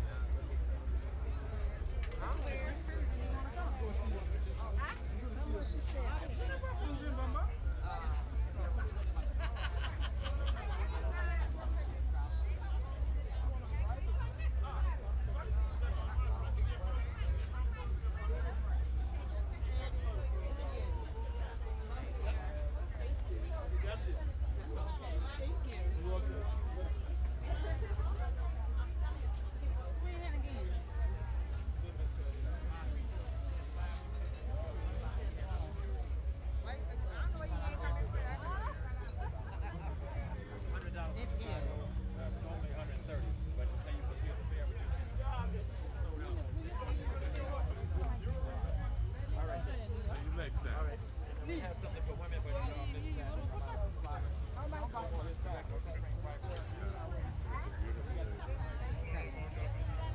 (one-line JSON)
{"title": "Washington Park, S Dr Martin Luther King Jr Dr, Chicago, IL, USA - African Fest 1", "date": "2012-09-03 17:30:00", "description": "Recorded on Zoom H2 with in ear binaural mics\nThis is recording took place at the African Festival of the Arts on Labor Day Weekend 2012", "latitude": "41.80", "longitude": "-87.61", "altitude": "184", "timezone": "America/Chicago"}